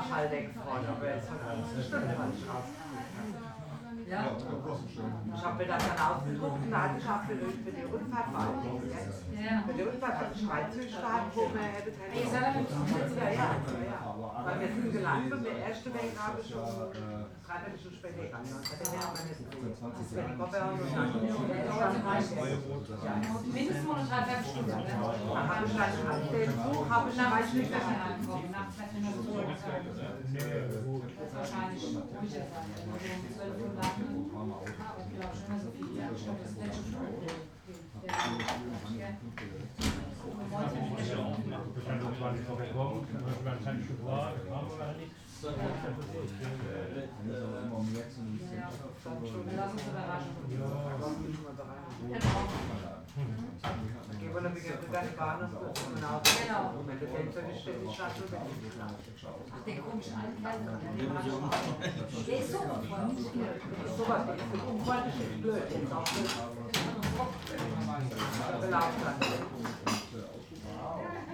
guests talking with the manager of the pub about train connections
the city, the country & me: october 17, 2010
Niederheimbach, Deutschland